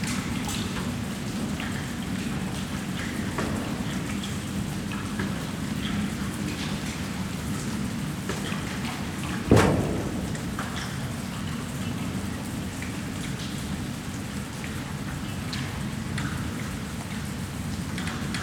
Pflügerstrasse 55, rain, regen, lluvia
regen, rain, lluvia